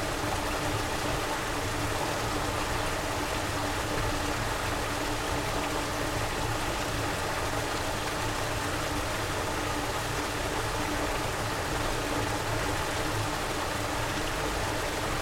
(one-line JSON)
{"title": "Deguliai, Lithuania, in broken pipe", "date": "2022-07-02 18:45:00", "description": "Some broken pipe under the road. small mics inside.", "latitude": "55.44", "longitude": "25.53", "altitude": "138", "timezone": "Europe/Vilnius"}